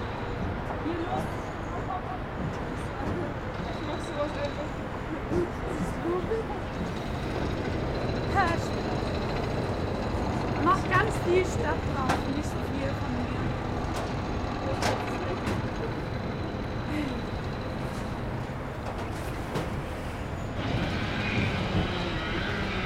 Am Lustgarten, Berlin, Allemagne - Berliner Dom
On the roof of the Berliner Dom, Zoom H6, MS microphone